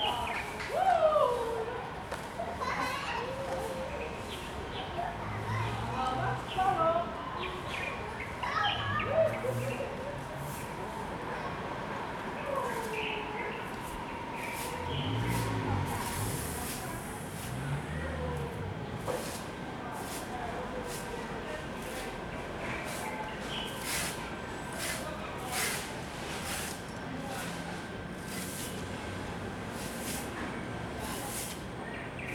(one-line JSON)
{"title": "Ln., Sec., Xingnan Rd., Zhonghe Dist. - in the small Park", "date": "2012-02-14 15:42:00", "description": "in the small Park, Children and the elderly, Sweep the floor, Sony ECM-MS907+Sony Hi-MD MZ-RH1", "latitude": "24.98", "longitude": "121.51", "altitude": "19", "timezone": "Asia/Taipei"}